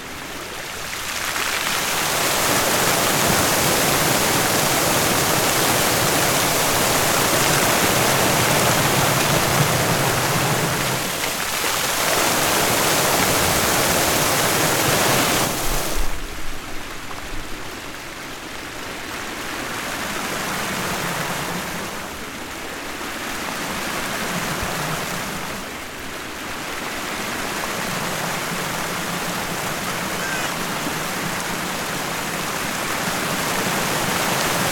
Vilnius, Lithuania - Dancing fountain in the middle of a park
A short routine of a programmed dancing fountain, captured with ZOOM H5. The strength of water streams keeps changing in different intervals, and then abruptly stops, leaving us with surrounding park ambience.